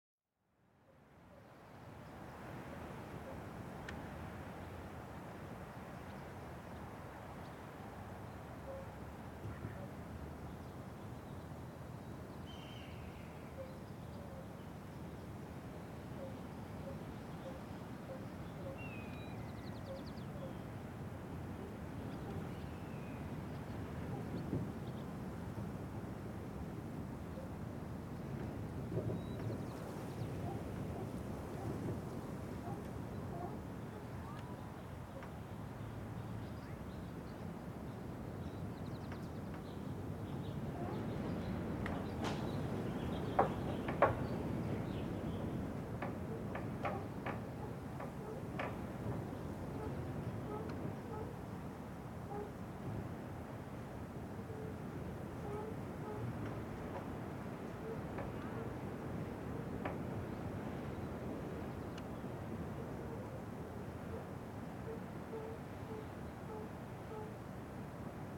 Pierce Point Ranch barn ambience
quietude at the Pierce Point Ranch in Point Reyes park